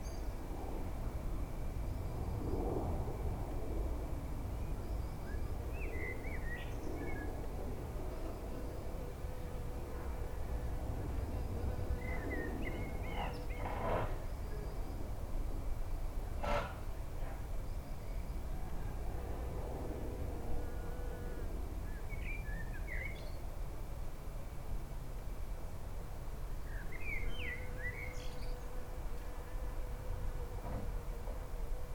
The birdtable, Katesgrove, Reading, UK - Planes, birds, traffic and wind chimes
This recording was made by strapping my EDIROL R-09 onto the underside of a bird table with a cable tie. From there is picks up on the ceramic wind chimes that hang near the back door, the birdsong of the birds that hang out in a nearby Walnut tree and the surrounding hedges, the huge noise of planes passing on the flight path to Heathrow, some noises from vehicles on the nearby roads, a blackbird, and a general rumble of traffic. A couple of wood pigeons also sound in the recording, and there is a tapping sound, produced by the birds fetching seed off the table with their beaks.
18 April, ~17:00